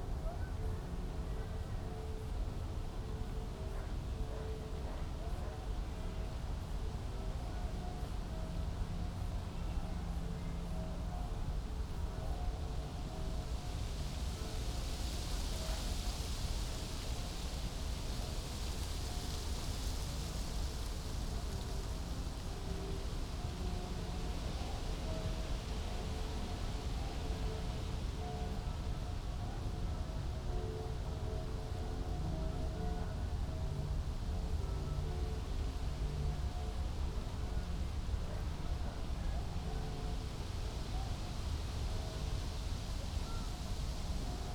Tempelhofer Feld, Berlin, Deutschland - summer afternoon ambience
at the poplar trees, summer Sunday afternoon ambience with wind and lots of human activity in a distance
(Sony PCM D50, Primo EM172)